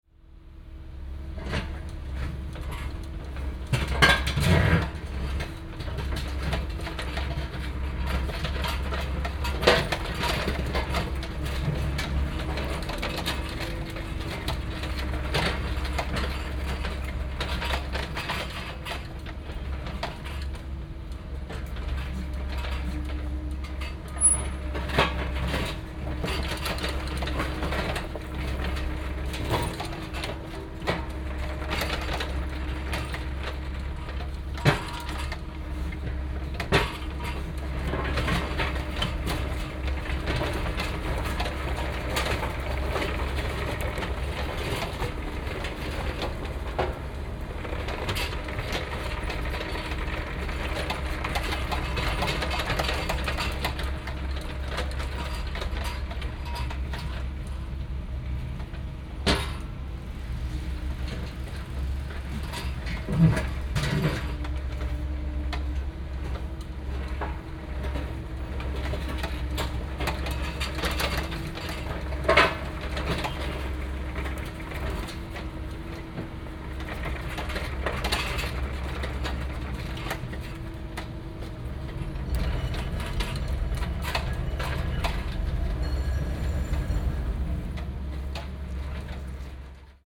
11.10.2008 11:00
planierraupe zerkleinert steine zwischen den gleisen
construction set, caterpillar crunching flagging between tracks.
Berlin, 11 October 2008, 11:00